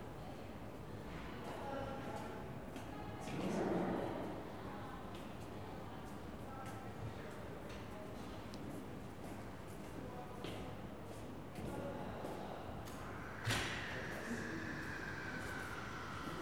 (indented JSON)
{
  "title": "Gaillon, Paris, France - Passage Choiseul, Paris",
  "date": "2016-07-14 18:32:00",
  "description": "Quiet sounds inside the Passage Choiseul, Paris.\nThe occasional sounds of footsteps crossing the arcade.\nThe stores were closed because of the national holiday - Bastille Day.\nZoom H4n",
  "latitude": "48.87",
  "longitude": "2.34",
  "altitude": "50",
  "timezone": "Europe/Paris"
}